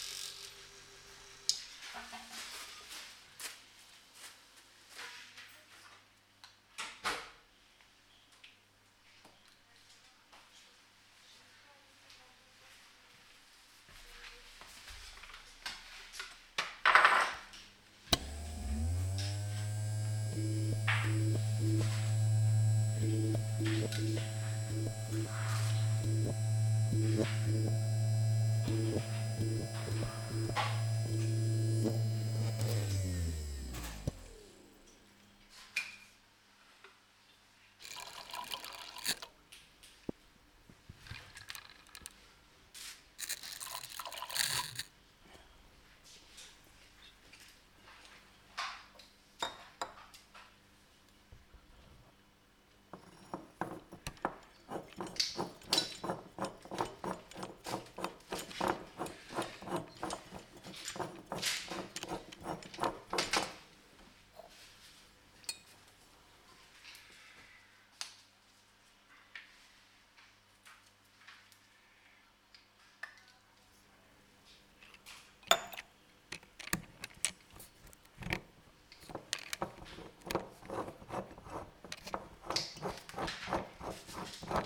Villeneuve-d'Ascq, France - Laboratoire de Biochimie - UGSF - Villeneuve d'As
Villeneuve d'Ascq (Nord)
Université de Lille
Laboratoire de biochimie
Ambiance